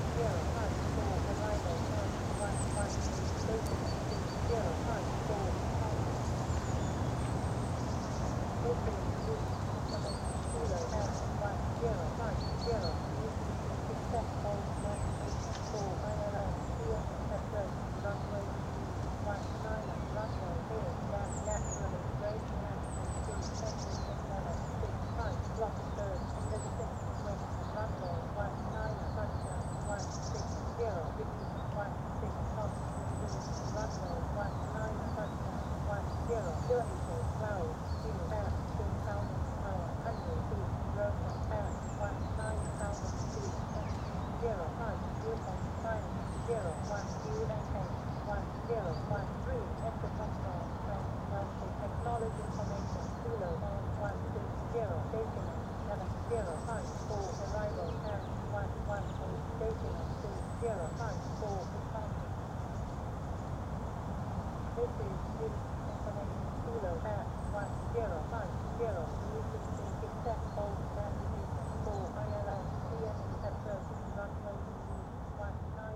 Vilnius, Lithuania, listening to radioscanner
took radioscanner with myself. standing amongst the trees and listening to Vilnius airport information service.
Vilniaus miesto savivaldybė, Vilniaus apskritis, Lietuva, 21 February, 13:00